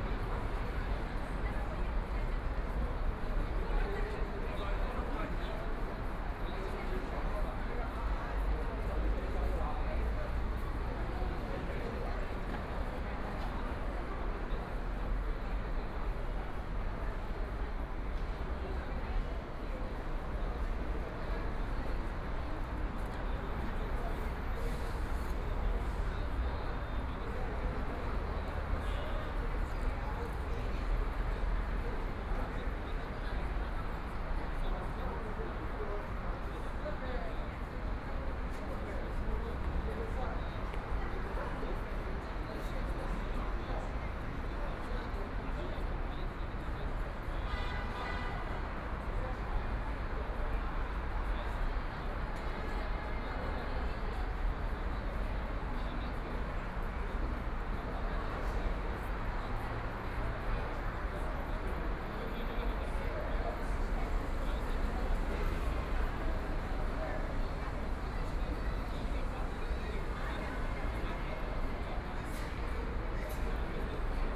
Wujiaochang, Yangpu District - The crowd
Sitting square edge, Traffic Sound, Binaural recording, Zoom H6+ Soundman OKM II